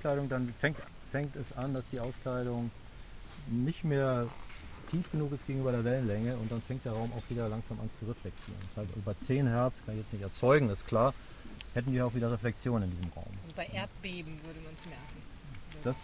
reverb chamber, anechoic chamber at Tech Uni